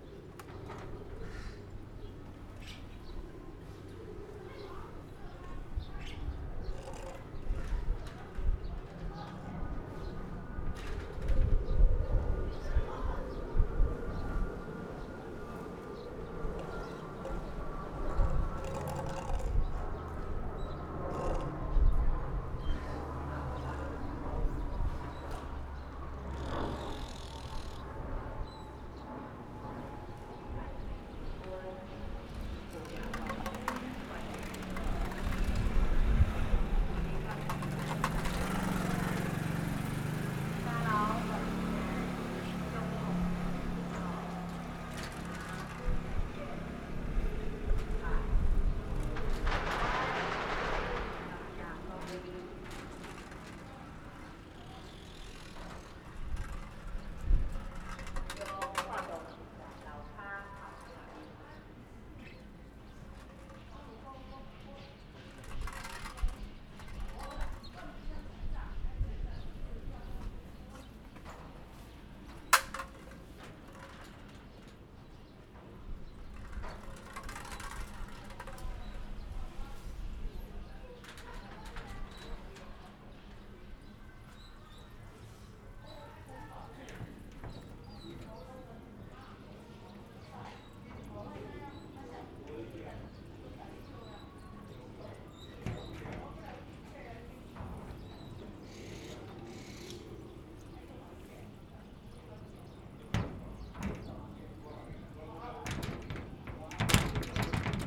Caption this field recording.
The sound of the wind, On the streets of a small village, Zoom H6 MS